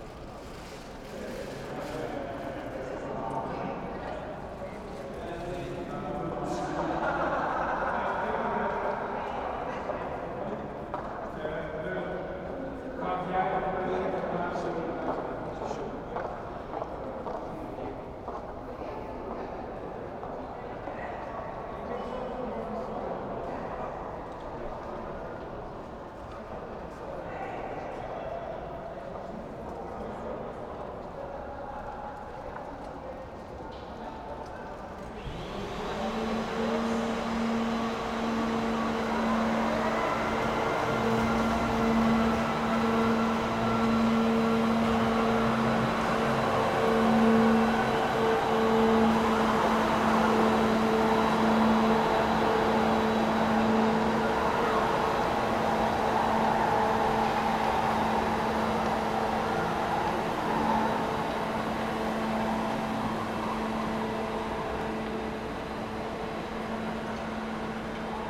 Cologne main train station, entrance hall ambience at night, few people compared to daytimes, announcements, a cleaning vehicle
(Sony PCM D50, internal mics)
Cologne, Germany, 30 September 2013